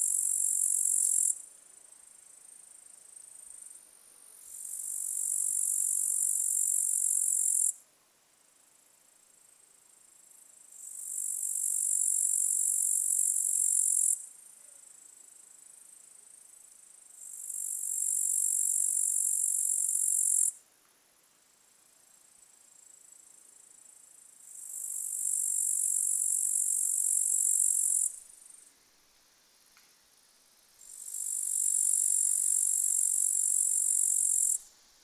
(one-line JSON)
{"title": "Lithuania, lone grasshopper", "date": "2011-08-03 18:10:00", "description": "lone grashopper at Armaliskiai mound...", "latitude": "55.66", "longitude": "25.72", "altitude": "131", "timezone": "Europe/Vilnius"}